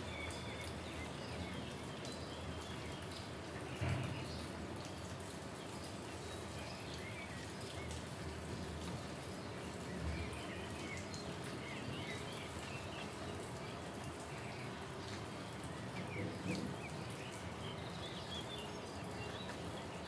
Un temporale passeggero, Fiorella, Franco e Marcello...
Summer storm, Fiorella, Franco and Marcello...